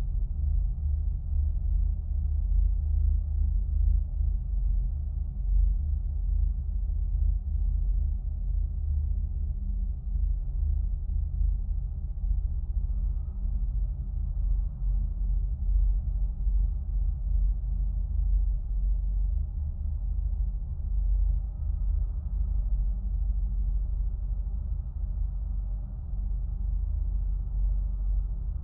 Morningside Heights - Fire Escape
Contact microphone on an 8th-floor steel fire escape.
Manhattan, NYC.